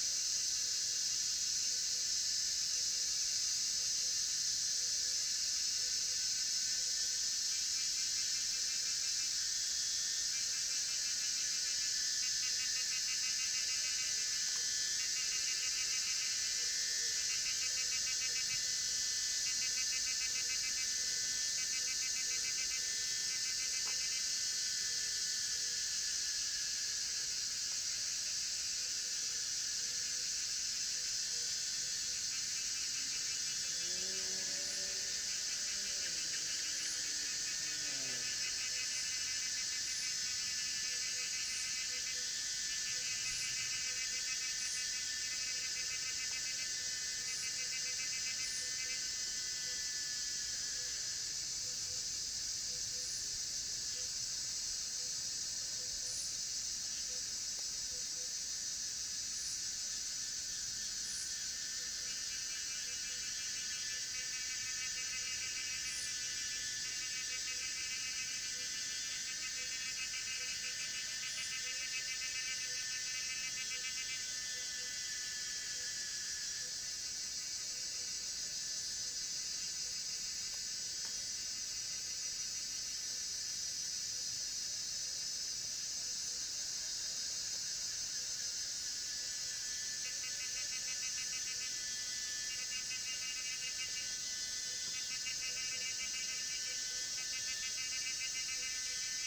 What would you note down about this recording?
Cicadas cry, Frogs chirping, Insects called, Zoom H2n MS+XY